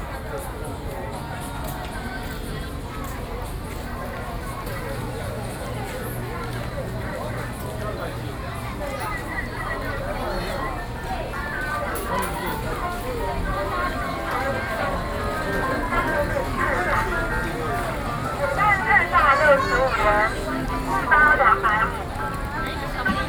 環河道路, Tamsui Dist., New Taipei City - Shopping Street
Shopping Street, Visitor
Sony PCM D50